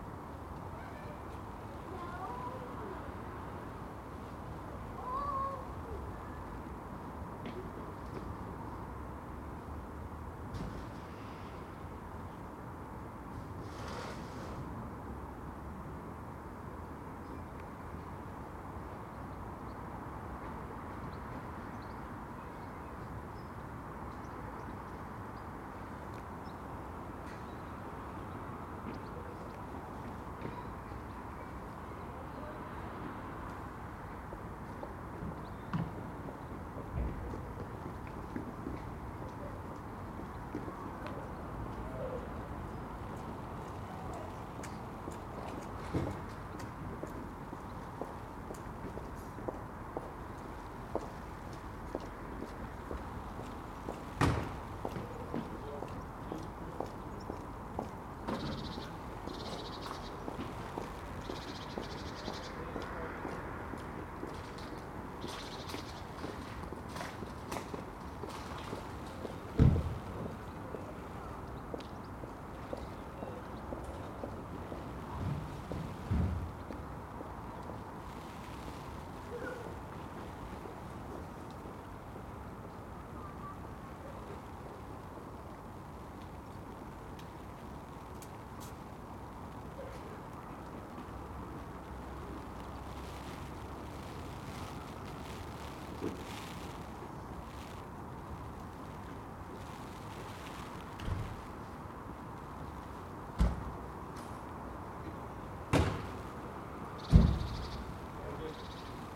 The Drive Westfield Drive Parker Avenue Brackenfield Road Meadowfield Road Brierfield Road
At a crossroads
pigeons surf the gusting wind
spilling across the skyline
Rooftop perched
pigeons
magpie
ariels substitute for tree tops
England, United Kingdom